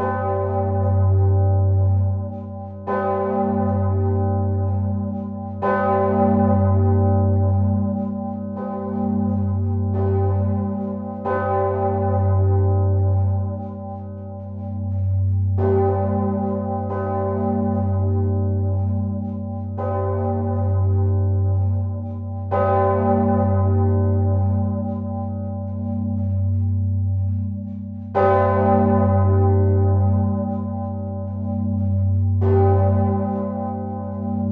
Anhée, Belgique - Maredsous big bell
The Maredsous abbey big bell, recorded inside the tower, on the Assumption of Mary day. The bells weight 8 tons and it's exceptionally ringed on this day. It was a loud beautiful sound.
A very big thanks to the broether Eric de Borchgrave, who welcomed us.
Anhée, Belgium